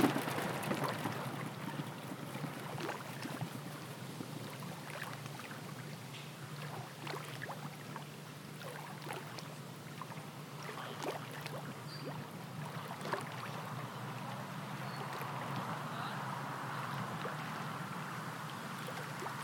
Lithuania - Near the lagoon
Recordist: Liviu Ispas
Description: On the lagoon walkway close to a restaurant on a sunny day. Water sounds, birds, bikes and people passing by. Recorded with ZOOM H2N Handy Recorder.